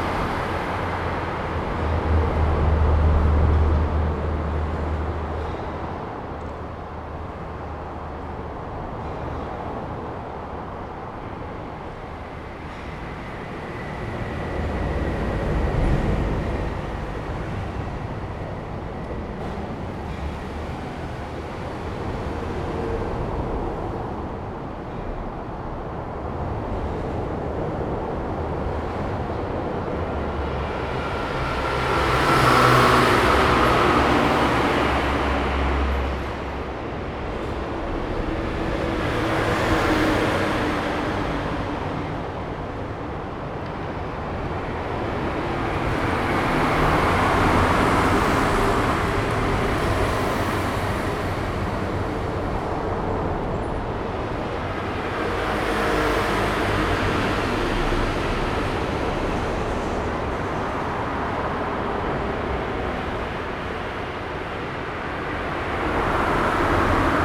Zhangcao Rd., Changhua City - below the high-speed road
under the high-speed road, Traffic sound
Zoom H2n MS+XY